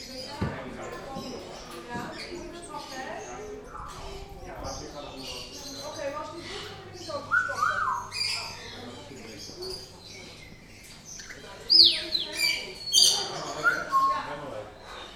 Bird shop 4-Animals, Rijswijk.
Birds -mainly parrots- singing, whistling and talking in a bird shop.
Rijswijk, The Netherlands, 16 April 2011